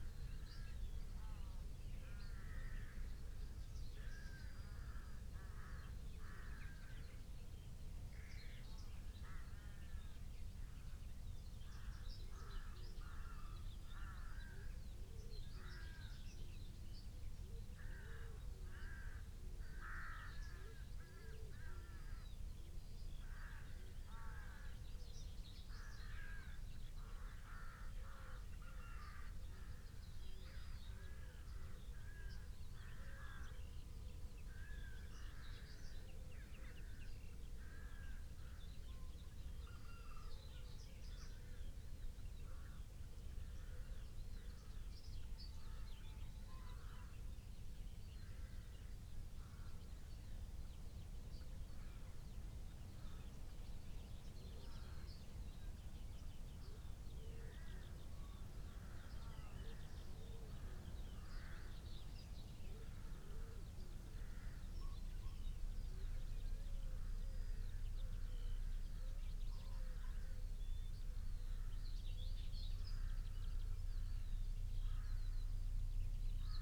dawn patrol ... hill top ... xlr SASS to Zoom H5 ... police helicopter flew over bird recording gear left out previously ...... bird calls ... song ... rook ... whitethroat ... yellowhammer ... skylark ...
Yorkshire and the Humber, England, United Kingdom